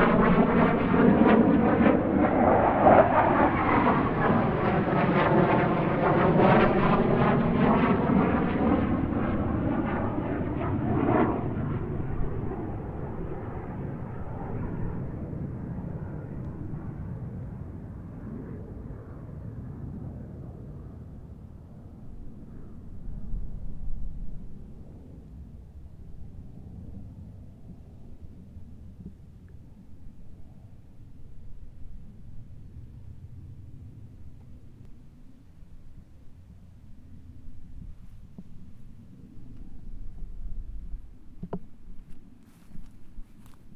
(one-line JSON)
{"title": "Chemin des Tigneux, Chindrieux, France - Rafales", "date": "2022-09-01 18:50:00", "description": "Passage de deux Rafales au dessus de la montagne du Sapenay.", "latitude": "45.82", "longitude": "5.85", "altitude": "322", "timezone": "Europe/Paris"}